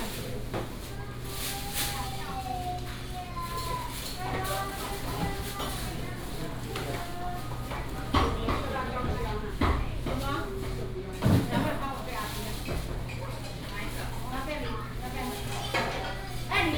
Gongzheng St., Fenglin Township - Inside the restaurant
Inside the restaurant
Binaural recordings
Hualien County, Taiwan, December 2016